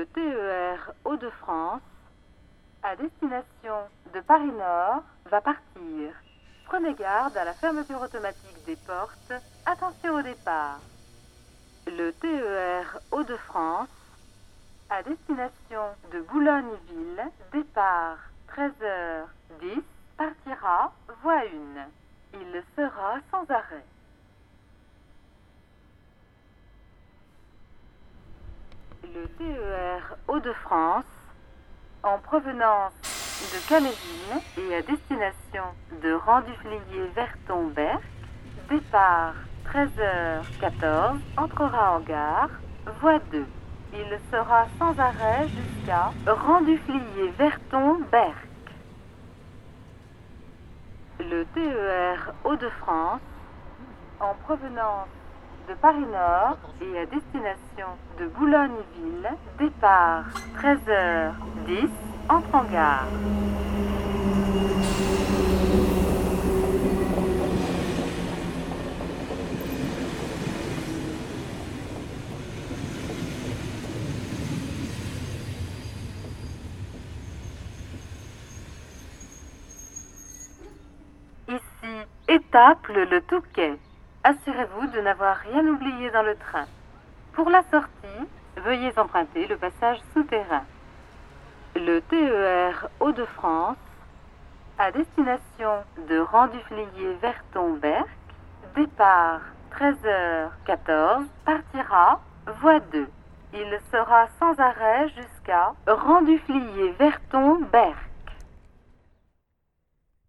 Gare Etaples Le Touquet, Pl. de la Gare, Étaples, France - Gare ferroviaire d'étapes-Le Touquet
Gare ferroviaire d'Étaples - Le-Touquet
ambiance sur le quai - départ et arrivée des trains et annonces